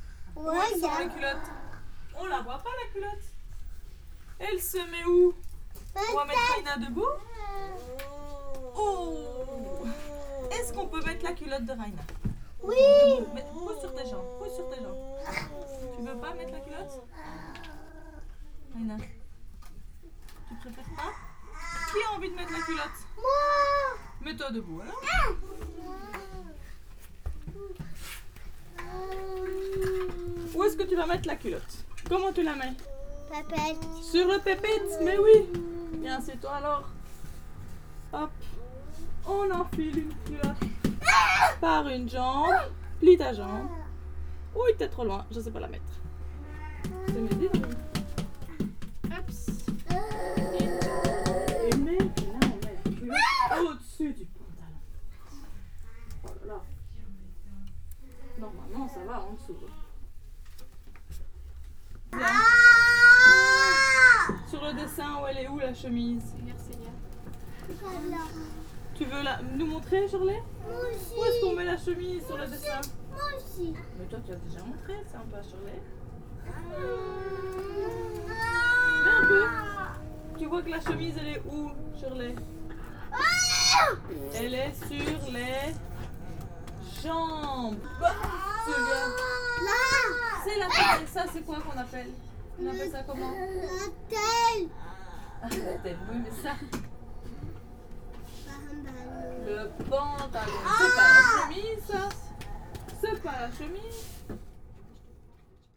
Quartier des Bruyères, Ottignies-Louvain-la-Neuve, Belgique - Escalpade school
Escalpade school is a place intended for children who have intellectual disability, learning disability and physical deficiency. This school do Bobath NDT re-education (Neuro Developpemental Treatment).
This recording is a course. A professor explains where to wear socks and panties. She shows the wrong places : on the hands, on the head, in aim children physically understand the place is wrong.
Ottignies-Louvain-la-Neuve, Belgium